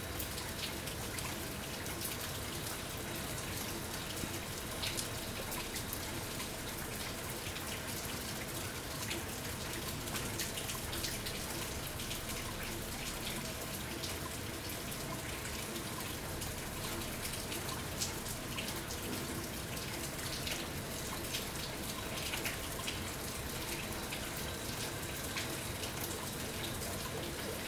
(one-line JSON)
{"title": "Broads Rd, Lusaka, Zambia - Lusaka rains...", "date": "2018-12-05 19:45:00", "description": "soundscapes of the rainy season in Lusaka...", "latitude": "-15.41", "longitude": "28.29", "altitude": "1279", "timezone": "Africa/Lusaka"}